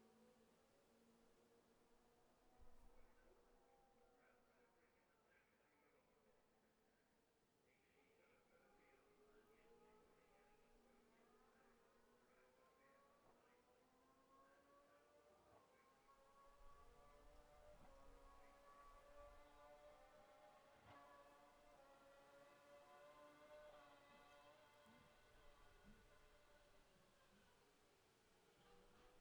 Gold Cup 2020 ... Sidecars practice ... dpa bag MixPre3 ...
Scarborough, UK, 11 September 2020